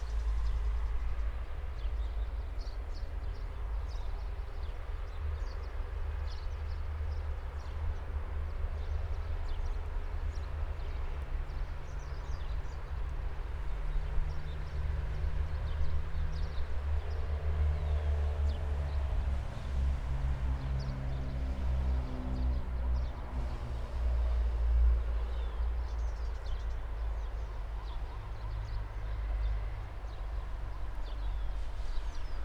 Victoria Gardens, San Ġwann, Swieqi, Malta - ambience
Nature is rare in Malta, trees too. The land is used and utilized almost everywhere. While travelling with the bus, I've looked into that valley called Victoria Garden, from above, and I was curious about what to hear...
(SD702, DPA4060)
San Ġiljan, Malta, 7 April